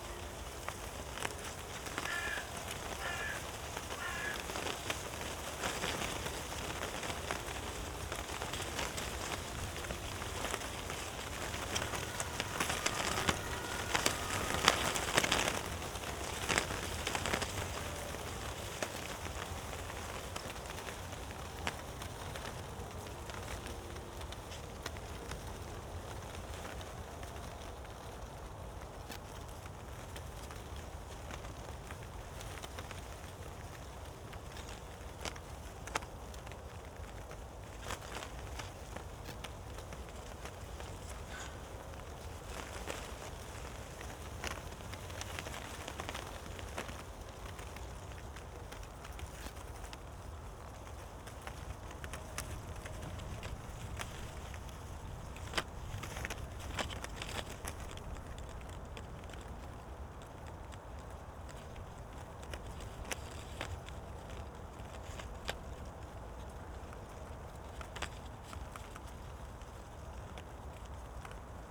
flight control point, Tempelhofer Feld, Berlin - wind in fence, paper stripes
former flight control point on Tempelhof airport, the fence is covered with a lot of paper stripes fluttering in the wind.
(SD702, AT BP4025)
Berlin, Germany, 28 October 2012, ~10:00